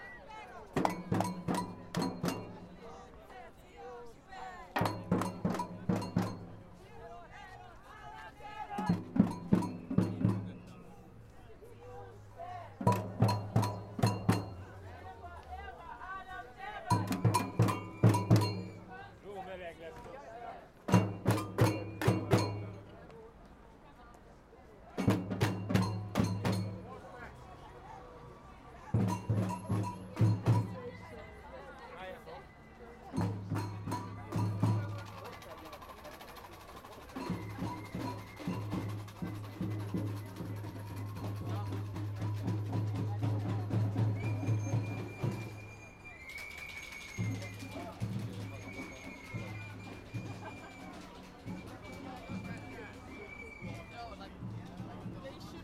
Demonstration, Budapest - Walking in the Underground
The Demonstration (see other recordings next to this one) for Ahmed by Migszol is walking down some stairs and 'vanishes' under the street.